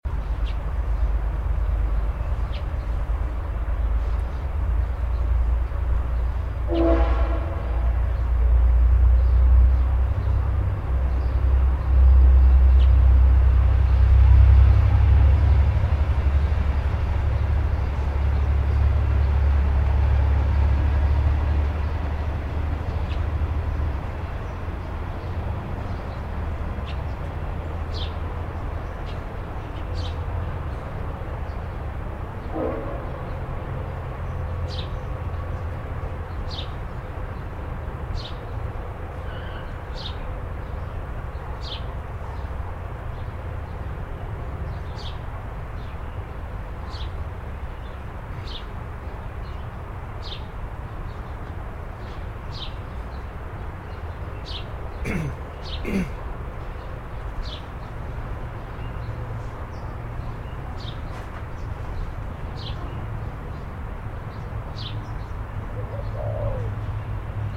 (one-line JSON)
{"title": "4 Ailsa st", "date": "2011-02-01 06:36:00", "description": "8:00am, the soundscape in my backyard", "latitude": "-37.87", "longitude": "144.76", "altitude": "13", "timezone": "Australia/Melbourne"}